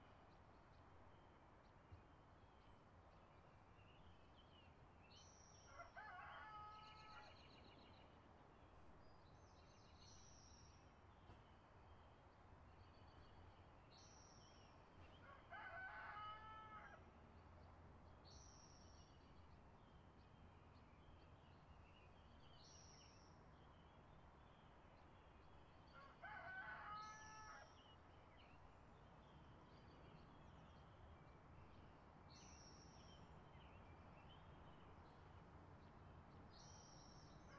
Mountain blvd. Oakland - MBLVD ambience
last of the recordings from Mountain blvd.
Oakland, CA, USA